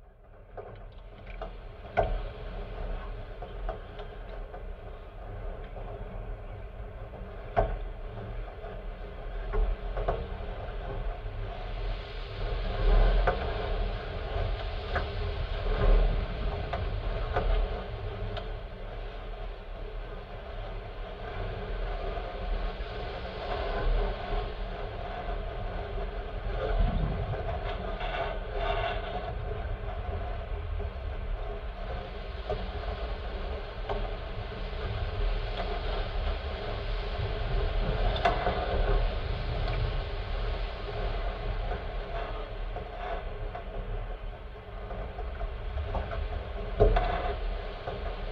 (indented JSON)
{
  "title": "Šlavantai, Lithuania - Metal boat swaying",
  "date": "2019-06-28 13:00:00",
  "description": "Dual contact microphone recording of a metal boat swaying in the wind and brushing against bulrush.",
  "latitude": "54.16",
  "longitude": "23.65",
  "altitude": "123",
  "timezone": "Europe/Vilnius"
}